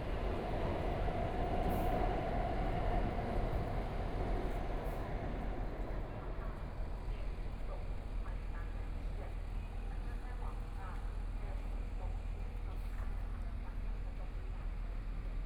Tamsui Line, Taipei - Walking beneath the track
Walking beneath the track, from Minzu W. Rd. to Yuanshan Station, Binaural recordings, Zoom H4n+ Soundman OKM II
Taipei City, Taiwan, 20 January 2014